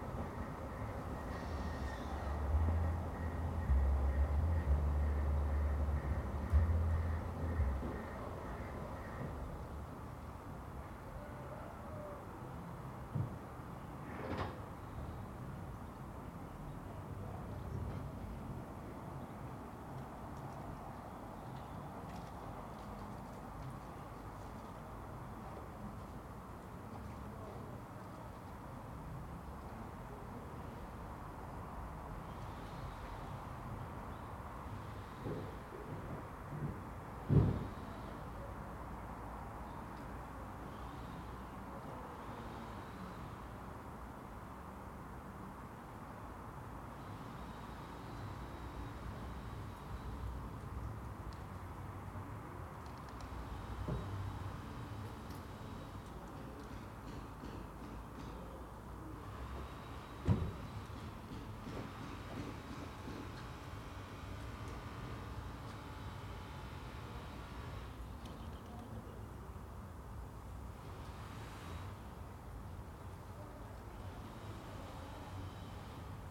Contención Island Day 28 outer northeast - Walking to the sounds of Contención Island Day 28 Monday February 1st
The Poplars Roseworth Avenue The Grove Stoneyhurst Road West Stoneyhurst Road Back Dilston Terrace
The metro rumbles
beyond a brick wall
Distant voices
A car passes and parks
a woman gets out
and goes into one of the houses